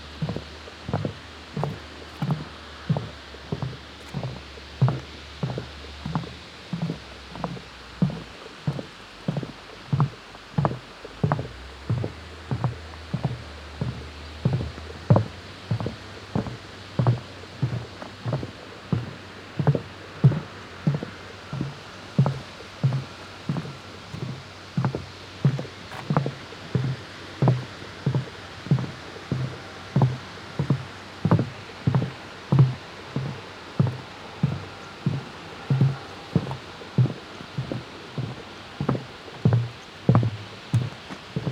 Ulflingen, Luxemburg - Nature path Cornelys Millen, wooden pathway
Auf den Naturpadweg Cornelys Mllen auf einem geschwungenen Weg mit Holzbohlen der hier über und durch ein Feuchtbiotop führt das von zwei Gattern begrenzt ist. Der Klang der Schritte auf den Holzbohlen.
On the nature path way Cornelys Millen on a curved path with wooden planks that leads over and through a wetland biotope. The sound of the steps on the planks.